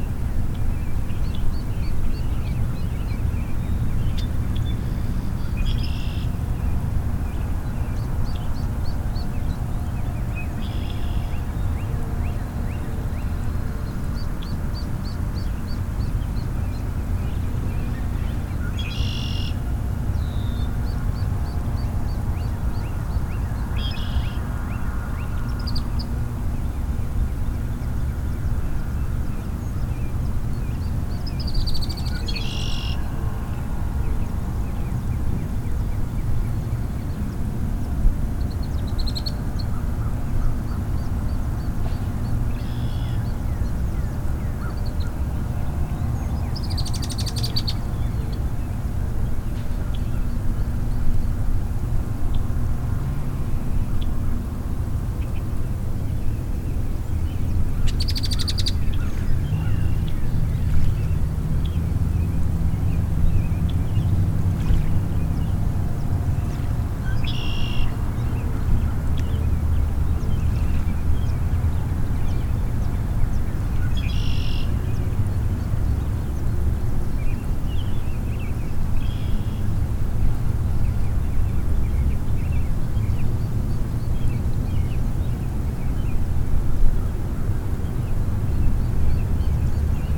{"title": "East Bay Park, Traverse City, MI, USA - Water's Edge (East Bay Park)", "date": "2014-06-27 17:15:00", "description": "Facing East Arm, Grand Traverse Bay. Small waterfowl in the distance; one passes closely. Red-winged blackbird at right, middle-distance. Recorded about three feet from the water, while atop a platform made from wooden pallets. Recorded on a Tuesday following Memorial Day weekend. Stereo mic (Audio-Technica, AT-822), recorded via Sony MD (MZ-NF810).", "latitude": "44.76", "longitude": "-85.58", "altitude": "175", "timezone": "America/Detroit"}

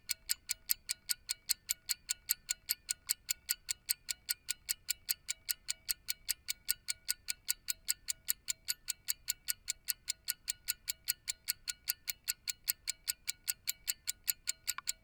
{"title": "Unnamed Road, Malton, UK - pocket watch ticking number two ...", "date": "2021-08-01 10:20:00", "description": "pocket watch ticking number two ... a waltham moon pocket watch made 1960s ... jrf contact mics attached to shell to olympus ls 14", "latitude": "54.12", "longitude": "-0.54", "altitude": "76", "timezone": "Europe/London"}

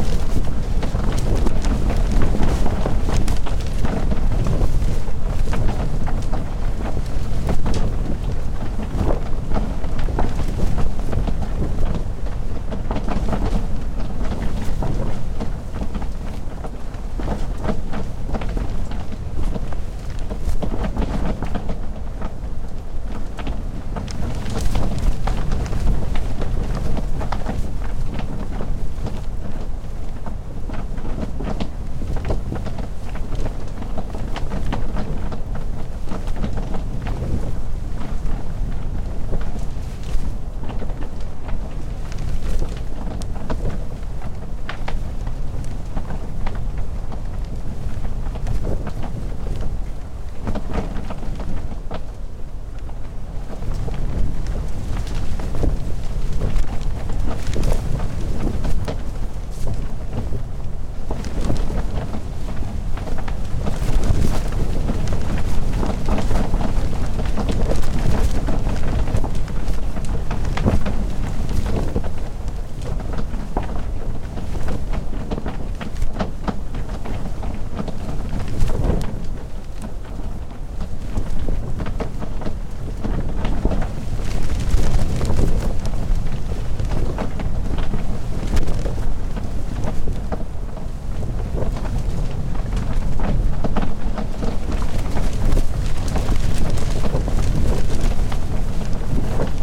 {"title": "Yurt tent X sea wind at dusk", "date": "2018-12-14 18:20:00", "description": "At the highest point on Gapa-do a round tent (Yurt?) has been erected...highly wind exposed without cover of trees...and looks over towards Jeju to the north and south toward Mara-do (Korea's southern most territory)...the tent design showed it's resilience in the relentless sea wind...", "latitude": "33.17", "longitude": "126.27", "altitude": "16", "timezone": "Asia/Seoul"}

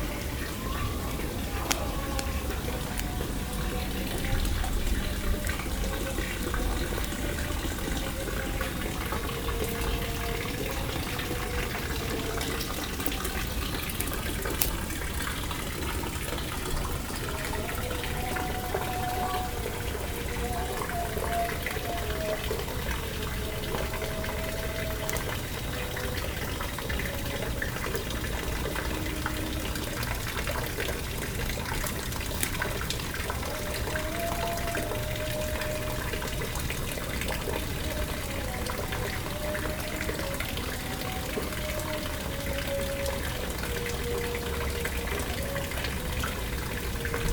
{"title": "Kensington, Johannesburg, South Africa - Fire and Water...", "date": "2010-09-04 18:38:00", "description": "A quiet private garden in Kensington suburb of Johannesburg, a fountain, a charcoal fire, and a distant evening call for prayer from a mosque…", "latitude": "-26.20", "longitude": "28.08", "altitude": "1766", "timezone": "GMT+1"}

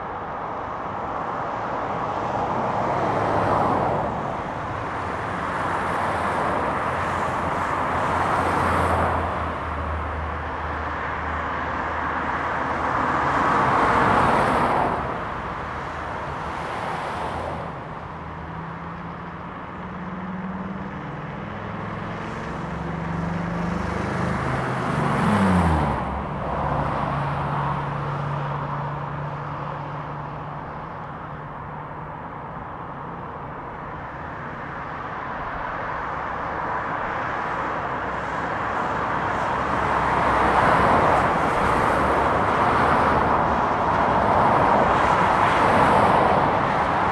{"title": "Radargatan, Uppsala, Švédsko - pedestrian bridge over highway, Uppsala", "date": "2020-01-26 15:59:00", "description": "gray sounds of cars passing below. very mediocre.\nrecorded with H2n, 2CH, handheld", "latitude": "59.88", "longitude": "17.62", "altitude": "21", "timezone": "Europe/Stockholm"}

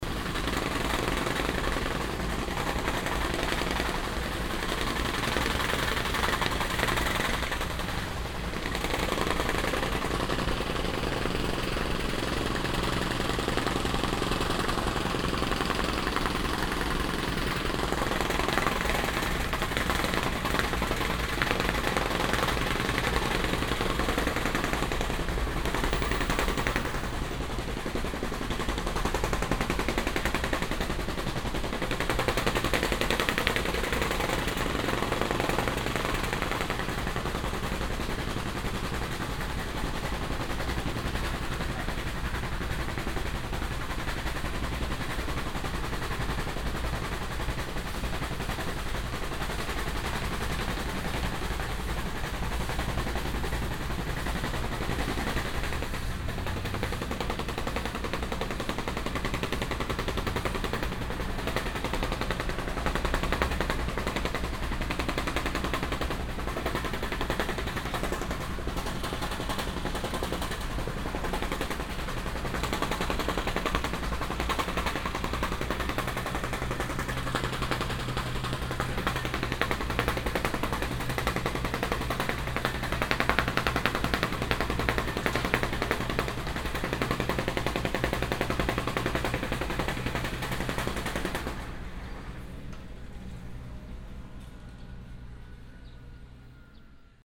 {"title": "yokohama, street construction", "date": "2011-06-29 20:56:00", "description": "A group of street workers doing some construction on one of the main roads in the middle of the day while 35 degree celsius.\ninternational city scapes - social ambiences and topographic field recordings", "latitude": "35.45", "longitude": "139.64", "altitude": "9", "timezone": "Asia/Tokyo"}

